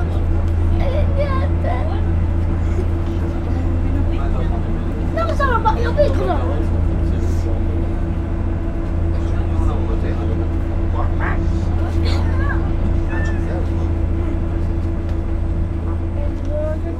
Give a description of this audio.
On the Vaporetto to Burano, a child is angry about his game console, Zoom H6